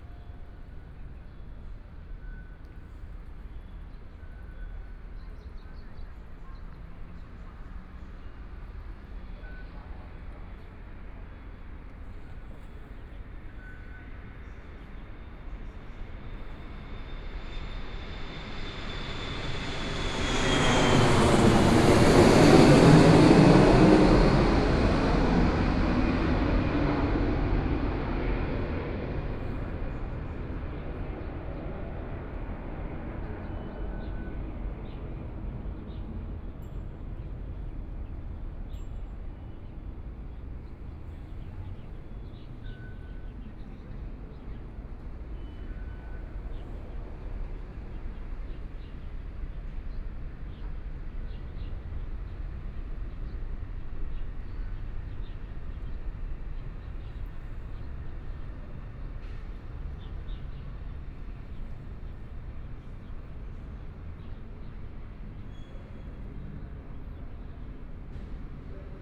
Yuanshan Station, Zhongshan District - Outside MRT
Environmental sounds, Aircraft traveling through, MRT train stop away from the station and, Binaural recordings, Zoom H4n+ Soundman OKM II
Taipei City, Taiwan